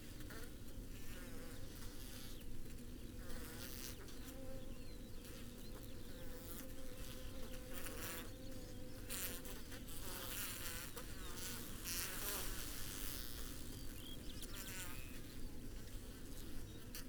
bee swarm ... xlr SASS on floor to Zoom F6 ... this according to a local bee keeper was a swarm ... the bees were smeared on the outside of the hive ... he said the queen would be in the middle of the mass ... they had swarmed as the hive might have been too small for the colony ..? the combs were full ..? the old queen had died ..? the new queen had killed her siblings ... would then having a mating flight before being led to a new site ... the first three minutes have the swarm buzzing in waves ... before general bee swarm buzzing ... some sounds are specific to the queens ... called quacking and tooting ... one sound is to quiet the swarm so the other queen can be located and stung to death ...